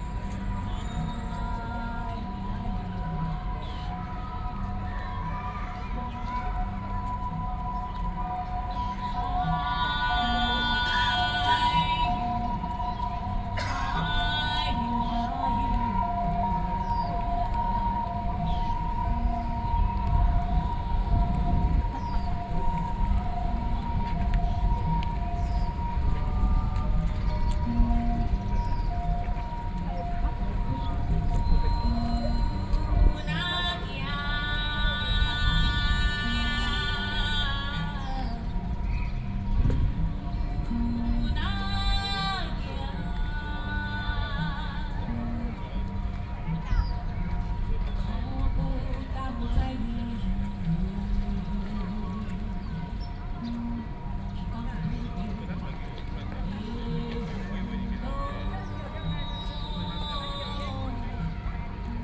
Liberty Square, Taipei - Hakka singer
Opposed to nuclear power plant construction, Hakka song performances, Binaural recordings, Sony PCM D50 + Soundman OKM II